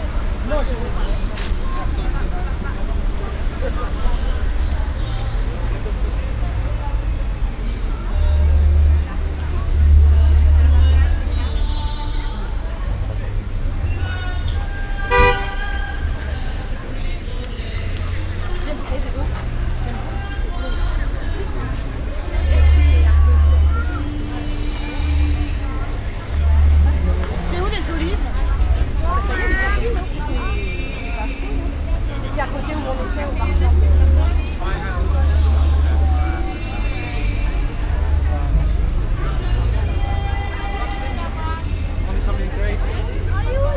Ont the weekly market of the village. A big crowd of visitors strolling around the market stalls.Some music coming from the square in the background.
international village scapes - topographic field recordings and social ambiences